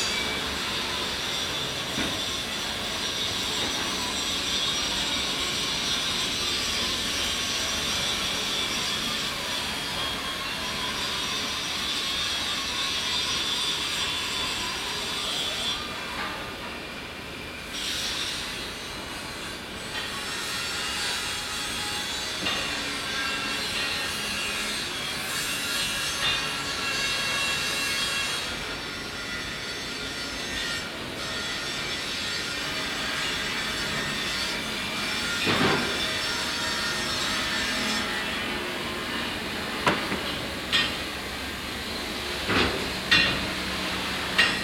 langenfeld, steel factory
industry - recording ion a factory for steel production- company Schmees - here: feinschliff der stahlform
soundmap nrw/ sound in public spaces - in & outdoor nearfield recordings
Langenfeld, Germany